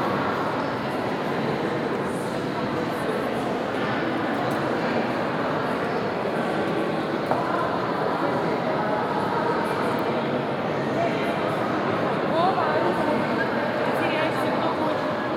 Ermitage, Sankt-Peterburg, Rosja - (622) BI Visitors at Ermitage
Binaural recording of walk thourgh I suppose the biggest room of Ermitage, with huge reverb and multiple languages reflections.
Sony PCM-D100, Soundman OKM